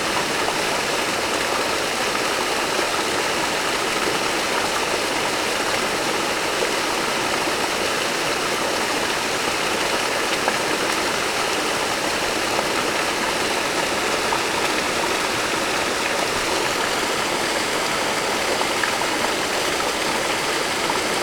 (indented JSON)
{
  "title": "Barrage de Thurins - le Garon",
  "date": "2010-11-07 17:40:00",
  "description": "Barrage de Thurins\nJeté du barrage dans le Garon",
  "latitude": "45.70",
  "longitude": "4.59",
  "altitude": "512",
  "timezone": "Europe/Paris"
}